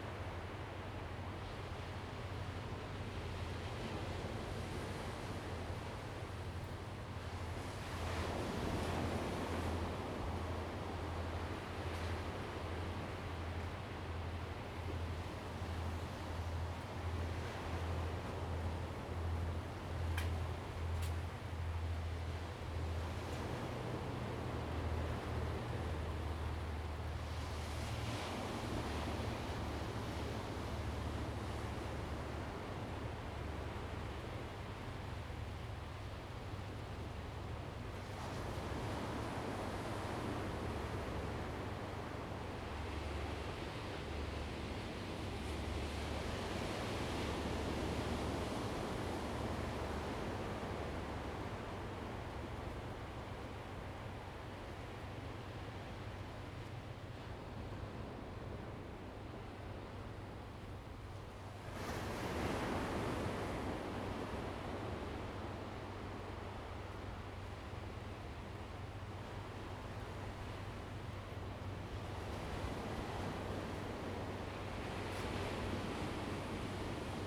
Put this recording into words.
At Waterfront Park, Sound of the waves, Zoom H2n MS +XY